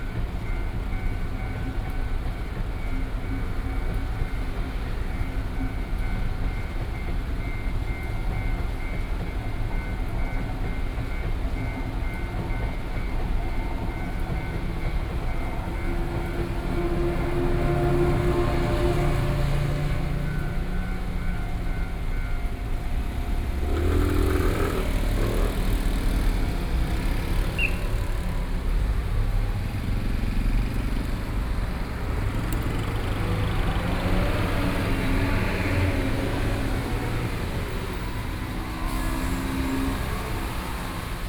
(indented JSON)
{
  "title": "Sec., Zhongshan Rd., Luodong Township - Railroad crossing",
  "date": "2013-11-07 10:05:00",
  "description": "Rainy Day, Crossroads, The sound from the vehicle, Railroad crossing, Train traveling through, Zoom H4n+ Soundman OKM II",
  "latitude": "24.67",
  "longitude": "121.77",
  "altitude": "9",
  "timezone": "Asia/Taipei"
}